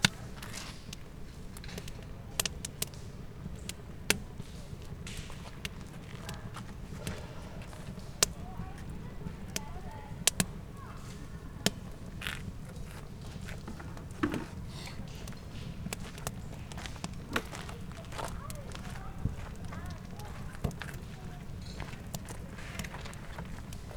Rolley Lake Trail, Mission, BC, Canada - Campfire at dusk
Recorded on a Zoom H5 during an overnight camping trip.
British Columbia, Canada, 3 October 2020